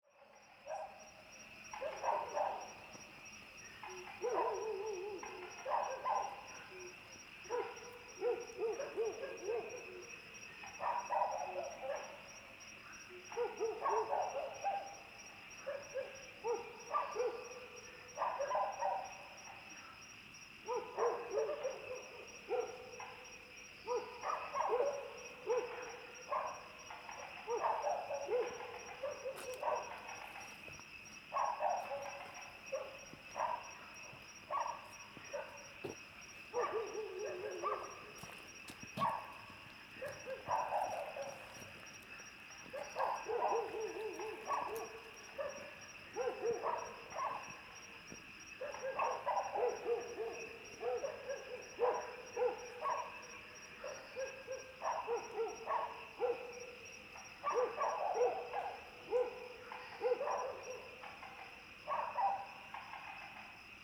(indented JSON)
{
  "title": "三角崙, Yuchi Township, Nantou County - Frogs chirping and Dogs barking",
  "date": "2016-04-19 18:44:00",
  "description": "Frogs chirping, Sound of insects, Dogs barking\nZoom H2n MS+XY",
  "latitude": "23.93",
  "longitude": "120.90",
  "altitude": "767",
  "timezone": "Asia/Taipei"
}